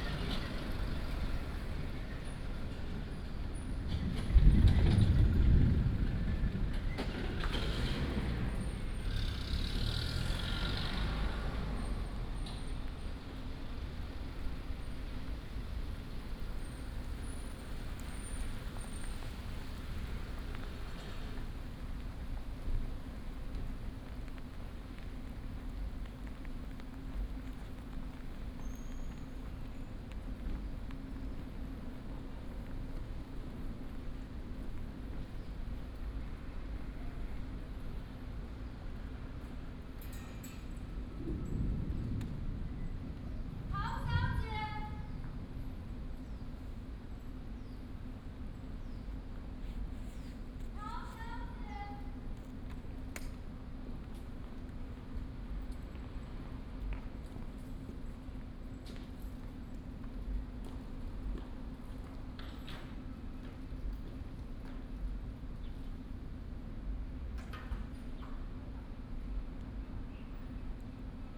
Ln., Sec., Academia Rd., Nangang Dist., Taipei City - Outside the museum
Outside the museum, Thunder, The plane flew through